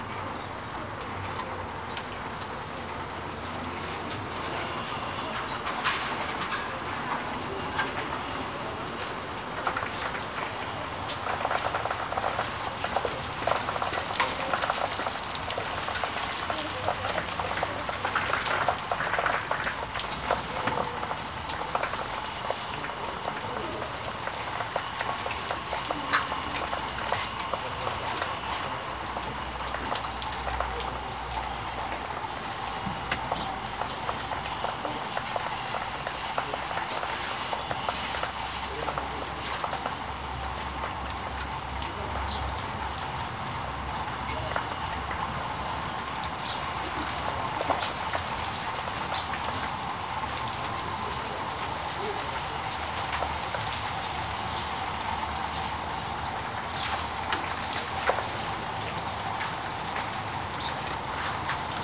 Poznan, Winiary district - forgotten water hose
a worker forgot to shut down the water flow a few stories up on a scaffolding. water dribbling down the structure, splashing on tools, buckets, trash and flooding the ground. at one point storekeeper suddenly opens the blind of his booth.
Poznan, Poland, 17 June 2014